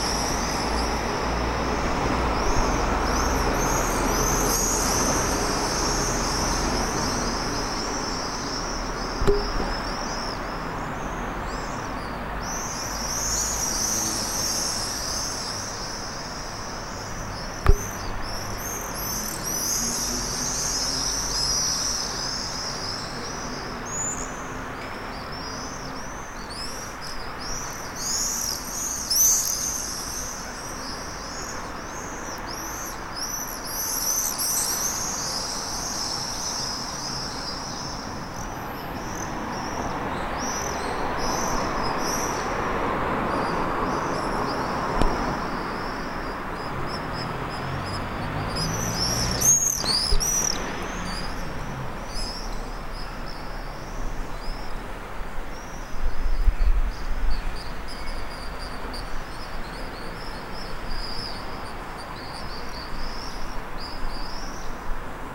{"title": "Staré Mesto, Slovenská republika - common swifts", "date": "2013-07-17 20:20:00", "description": "Swifts recorded from apartment.\nTento sťahovavý druh k nám prilieta ako jeden z posledných až koncom apríla – začiatkom mája a odlieta už začiatkom augusta hneď po vyhniezdení. Jeho typický hlas nám symbolizuje príchod teplého letného počasia.\nDážďovníky si budujú hniezda hlavne na sídliskách v panelákoch, predovšetkým vo vetracích otvoroch v atike a v štrbinách medzi panelmi. Pri zatepľovaní sa tieto miesta prekryjú izolačným materiálom, čím dochádza nielen k zániku úkrytov, ale často aj k usmrteniu týchto živočíchov, ktoré nemajú možnosť dostať sa von z úkrytov. Ak sa tieto práce vykonávajú počas hniezdneho obdobia dážďovníkov (15. apríl až 15. august), dochádza pri nich k priamemu úhynu hniezdiacich vtákov a k zničeniu ich hniezdisk. Dážďovníky majú navyše silnú väzbu na svoje hniezdiská. Tie, ktoré prežijú trvalú likvidáciu svojich hniezdisk pri zatepľovaní, majú problém nájsť si nové miesto na hniezdenie. Dážďovník obyčajný je zákonom chránený.", "latitude": "48.16", "longitude": "17.11", "altitude": "156", "timezone": "Europe/Bratislava"}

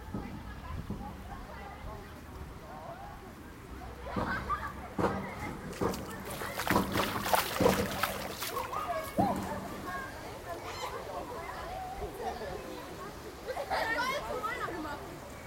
{"title": "lippstadt, public swimming pool", "description": "walking across the area. water, children etc.\nrecorded june 23rd, 2008.\nproject: \"hasenbrot - a private sound diary\"", "latitude": "51.67", "longitude": "8.33", "altitude": "75", "timezone": "GMT+1"}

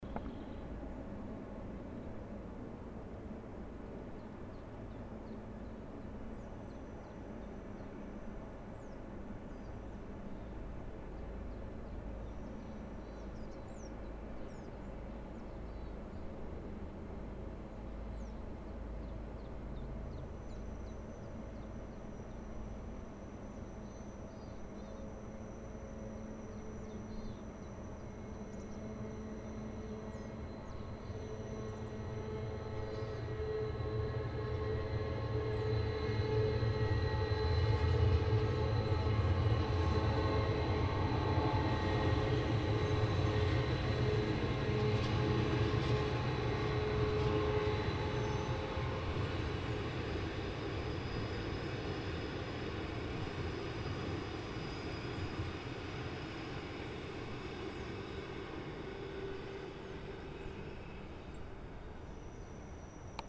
{"title": "Kehren der Rhätischen Bahn", "date": "2011-07-21 15:28:00", "description": "Kehrtunnels der Rhätischen Bahn, Berninabahn, Abstieg von Alp Grüm", "latitude": "46.37", "longitude": "10.03", "altitude": "1931", "timezone": "Europe/Zurich"}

